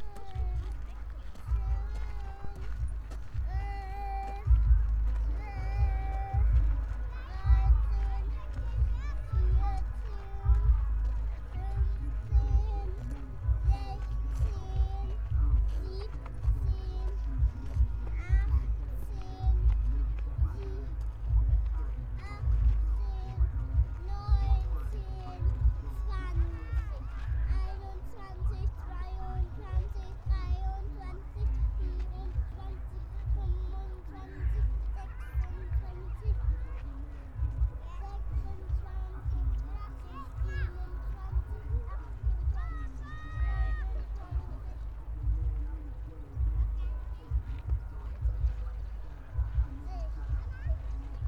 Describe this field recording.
I was looking for flocks of starlings, but a stupid sound system somewhere at the edge of the park was dominating the acoustic scene. anyway, it reflects the various activities going on at the former airfield. (SD702, 2xNT1, amplified above natural levels)